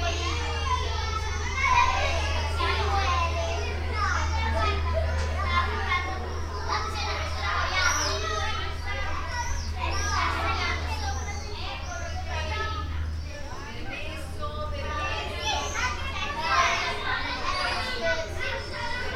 Via Leone Amici, Serra De Conti AN, Italia - Kindergarten exit caught from under an arch
Sony Dr 100 with windscreen (like all my recordings on this map).